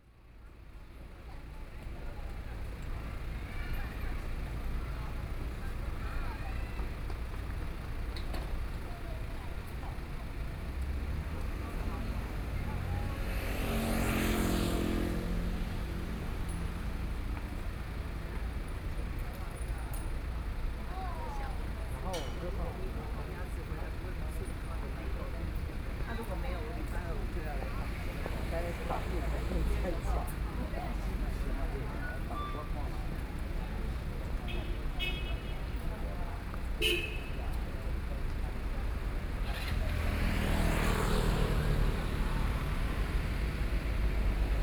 Guangming Rd., Beitou - in the roadside
Standing on the roadside, In front of a convenience store, Binaural recordings, People coming and going, Sony PCM D50 + Soundman OKM II
Taipei City, Taiwan, 3 November 2013